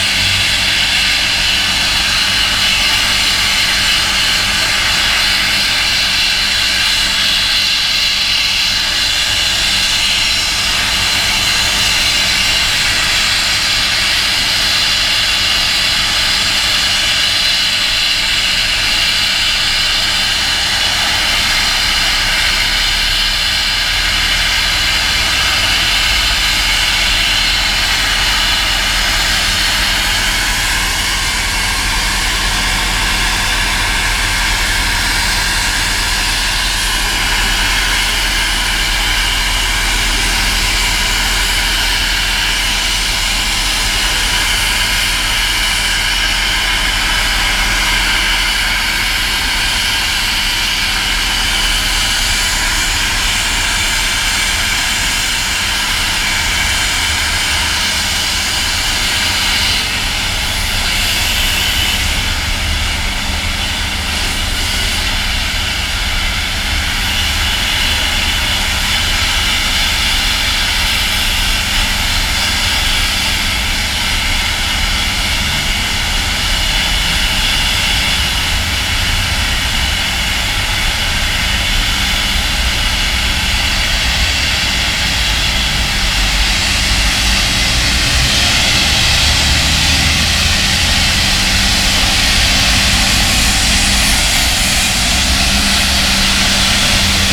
Poznan, Niestachowska - overpass works
Attention, loud noise. At the begging you can hear workers fixing high voltage lines over train tracks, talking and listening to the radio. They are working on a platform and the driver signals with a horn when they move to the next section. The tracks are located on a viaduct that is also under repair. Around 1:30 mark the worker starts sanding the base of the viaduct. Even though I was almost a hundred meters away, the sound of the sanding was deafening and drowning the heavy traffic moving below the viaduct. (roland r-07)
wielkopolskie, Polska, 24 August 2019, 12:54